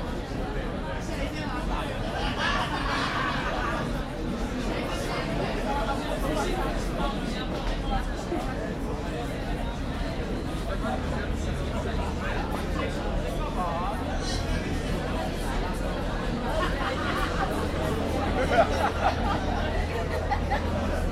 Restaurant Výletná on Letná hil
Summer evening at the busy Výletná open air bar. Nice view on Vltava, the opposite hill Vítkov.